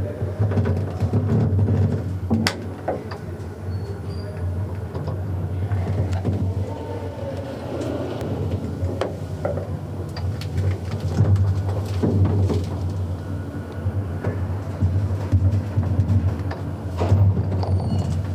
Lom, Česká republika - elevator
more infos in czech: